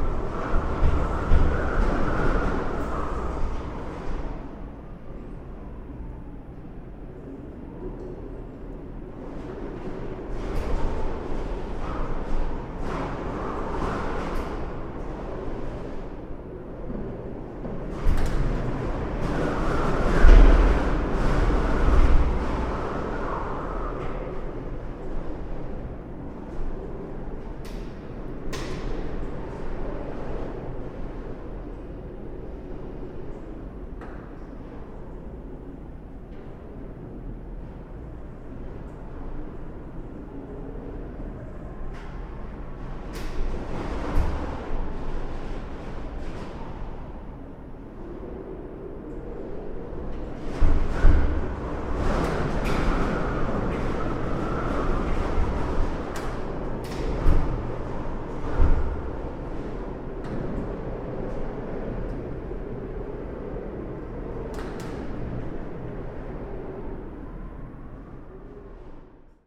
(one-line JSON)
{"title": "CSW stairwell in windstorm, Torun Poland", "date": "2011-04-07 17:20:00", "description": "creaking of the stairwell structure during a wind storm, Center of Contemporary Art Torun", "latitude": "53.01", "longitude": "18.60", "altitude": "51", "timezone": "Europe/Warsaw"}